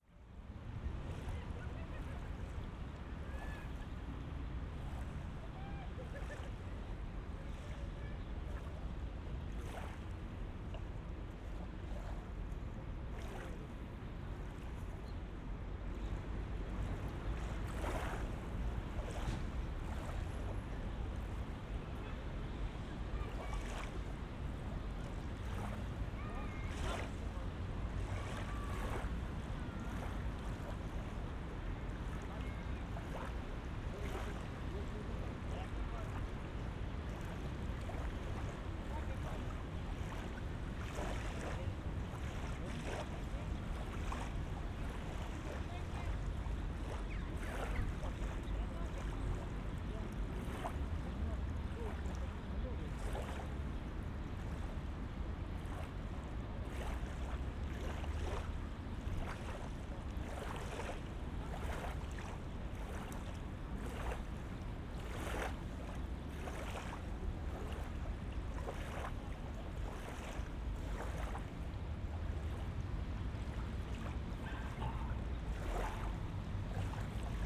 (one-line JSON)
{"title": "Slubice, Odra", "date": "2011-09-27 17:00:00", "description": "city hum near river odra, slubice, poland.", "latitude": "52.35", "longitude": "14.56", "altitude": "26", "timezone": "Europe/Warsaw"}